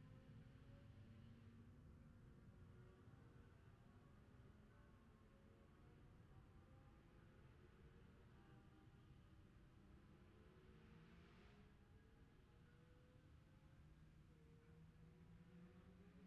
Jacksons Ln, Scarborough, UK - Gold Cup 2020 ...
Gold Cup 2020 ... 2 & 4 strokes qualifying ... Memorial Out ... dpa 4060s to Zoom H5 ...